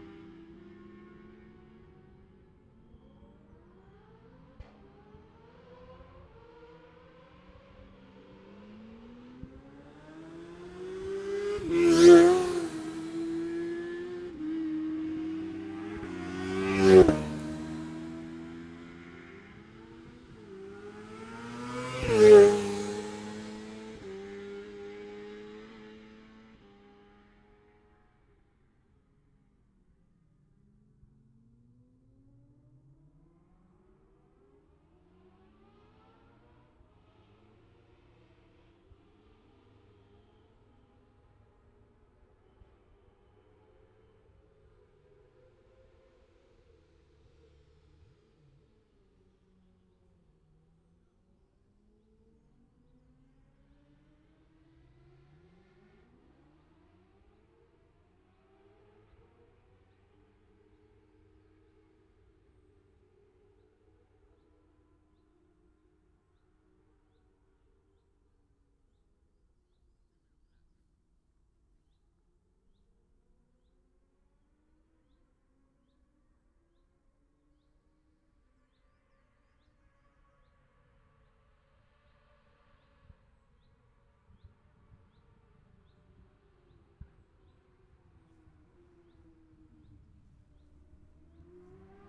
Scarborough, UK - motorcycle road racing 2017 ... sidecars ...
Sidecar practice ... Bob Smith Spring Cup ... Olivers Mount ... Scarborough ... open lavalier mics clipped to sandwich box ...
22 April